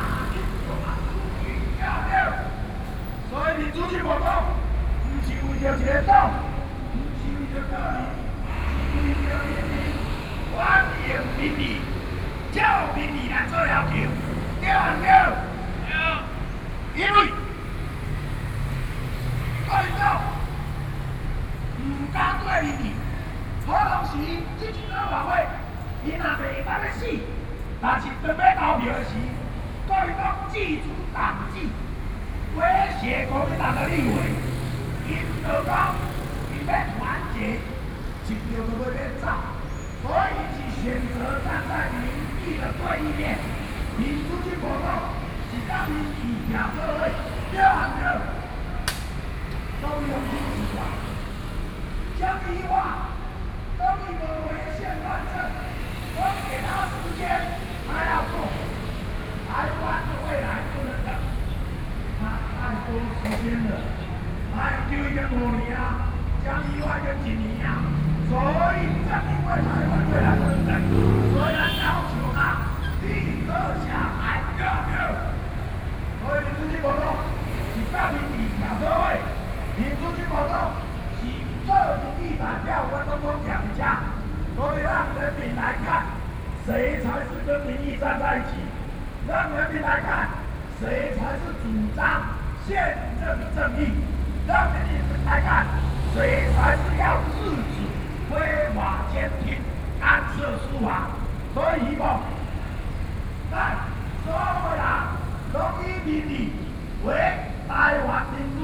Opposite side of the road, Opposition leaders, Speech shows that the Government is chaos, Binaural recordings, Sony PCM D50 + Soundman OKM II
15 October 2013, ~12:00